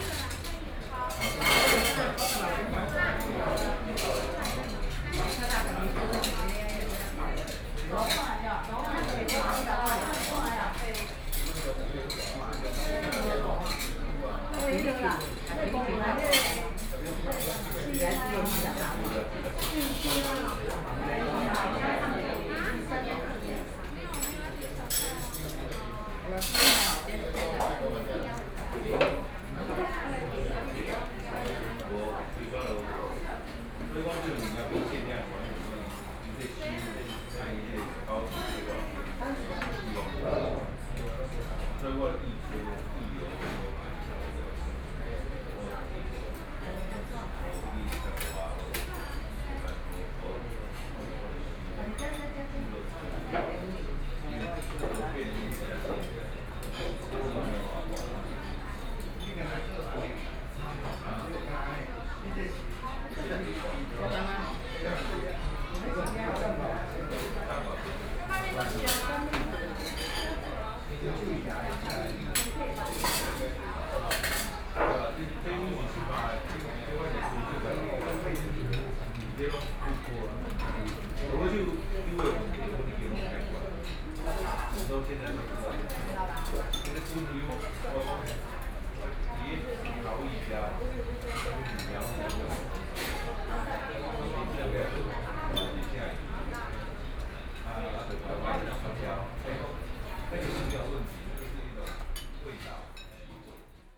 三星蔥牛肉麵館, Jiaoxi Township - In the restaurant
In the restaurant, Traffic Sound
Sony PCM D50+ Soundman OKM II